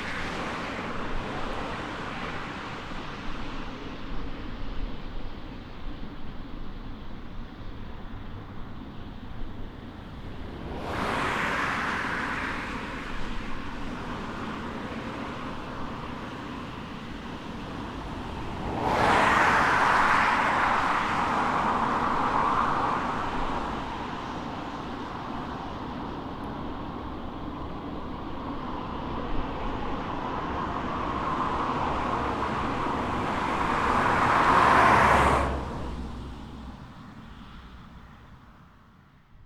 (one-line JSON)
{"title": "Pedestrian bridge over national road, Rovaniemi, Finland - Vehicles on national road 4", "date": "2020-06-18 22:48:00", "description": "Vehicles going in and out of the tunnel under the shopping centre in central Rovaniemi. Zoom H5 with default X/Y module.", "latitude": "66.50", "longitude": "25.72", "altitude": "84", "timezone": "Europe/Helsinki"}